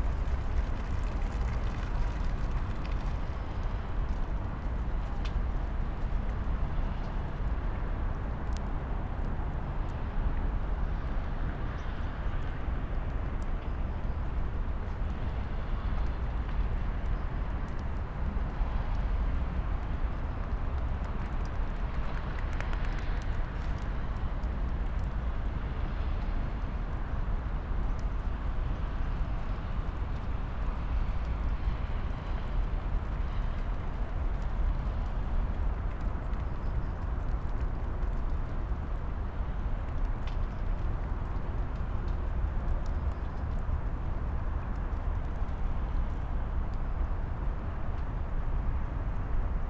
path of seasons, vineyard, piramida, maribor - ice chips
small parts of ice, crows, traffic hum